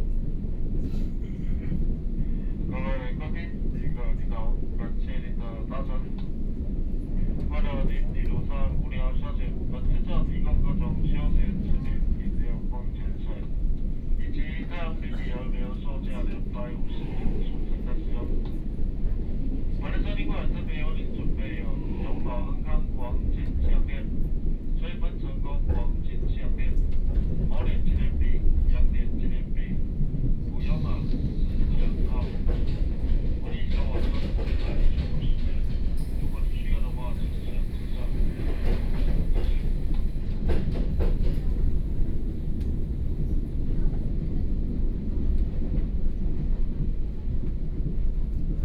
Train compartment, Compartment message broadcasting
East District, Hsinchu City, Taiwan, 6 September 2016